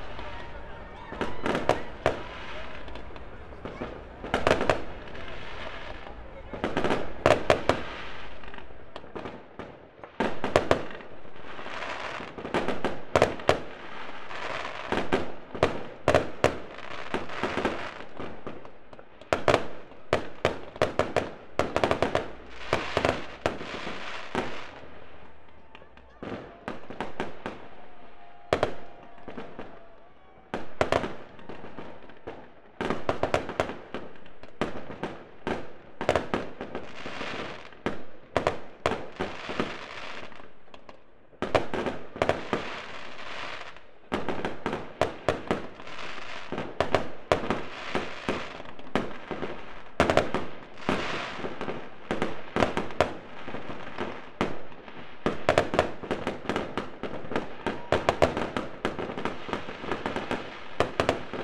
Fireworks - 2019 - Av. Eugene Levy 50-52-54, 2705-304 Colares, Portugal - New year 2019 - fireworks
Fireworks announcing the new year 2019, launched from the beach (Praia da Maças). Recorded with a SD mixpre6 and a AT BP4025 XY stereo mic.